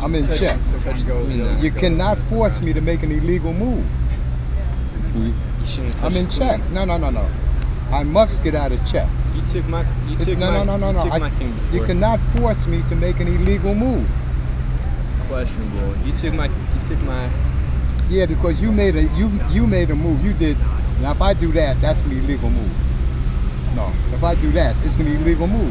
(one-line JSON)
{"title": "Washington Square, New York - Chess players in Washington Square, New York", "date": "2010-09-09 11:12:00", "description": "Chess players in Washington Square, New York. Joueurs d'échec à Washington Square.", "latitude": "40.73", "longitude": "-74.00", "altitude": "6", "timezone": "America/New_York"}